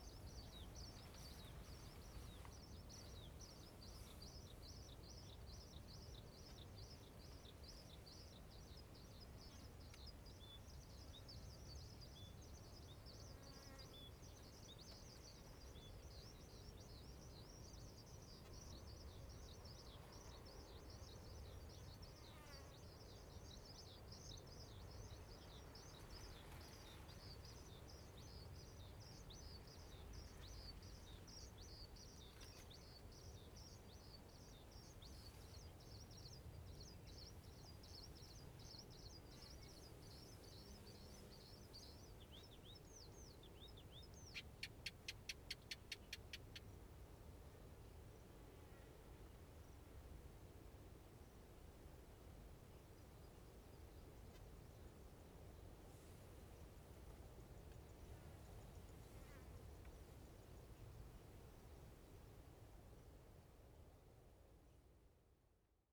龍磐公園, 恆春鎮 Pingtung County - In the bush
Birds sound, traffic sound, In the bush
Zoom H2n MS+XY
23 April 2018, 07:53